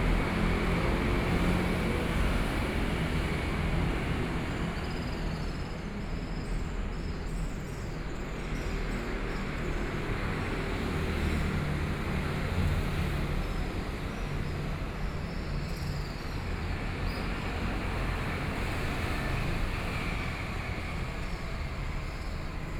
Zhongzheng E. Rd., Zhubei - Train traveling through
In the elevated roadway beneath, Train traveling through, Traffic Noise, Zoom H4n+ Soundman OKM II